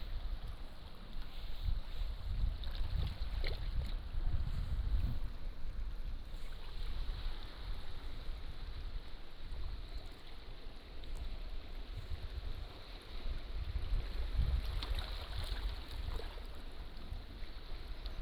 椰油村, Koto island - In a small pier
In a small pier, Sound tide, Chicken sounds, Birds singing, Small tribes
Taitung County, Lanyu Township, 28 October 2014